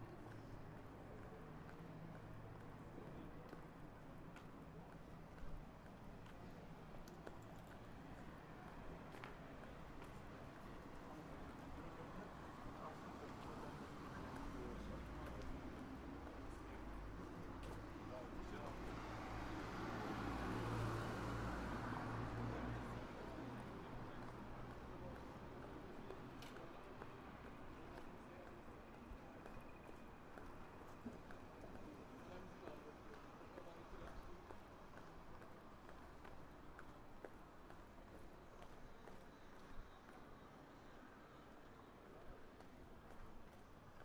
Hradec Králové, Czech Republic - Summer City Night Walking